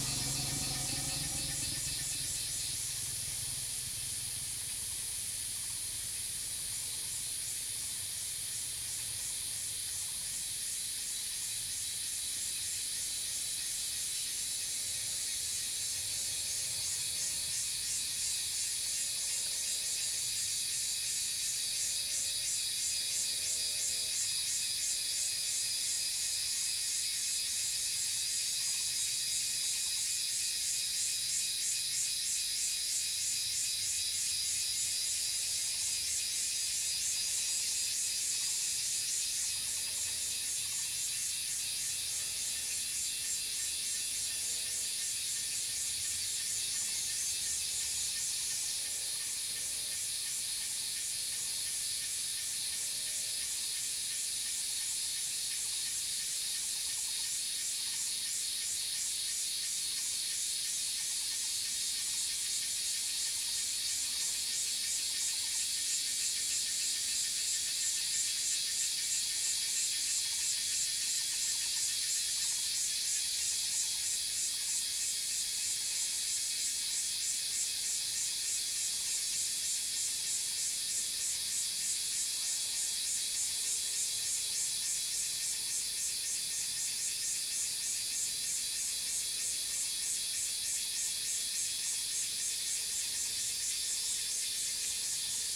種瓜路, 桃米里, 埔里鎮 - Cicadas sound
Cicadas cry, Traffic Sound, Bird sounds
Zoom H2n MS+XY